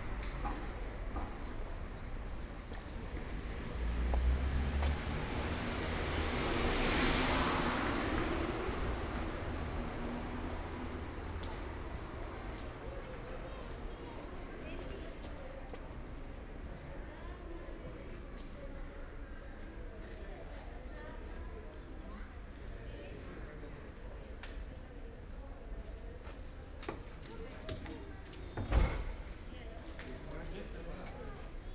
Ascolto il tuo cuore, città. I listen to your heart, city. Several chapters **SCROLL DOWN FOR ALL RECORDINGS** - Shopping in the time of COVID19
Wednesday March 11 2020. Following yesterday evening recording: walking in the square market at Piazza Madama Cristina, district of San Salvario, Turin the morning after emergency disposition due to the epidemic of COVID19.
Start at 11:50 a.m., end at h. 12:15 p.m. duration of recording 25'1O''
The entire path is associated with a synchronized GPS track recorded in the (kml, gpx, kmz) files downloadable here:
Torino, Piemonte, Italia, 11 March, ~12:00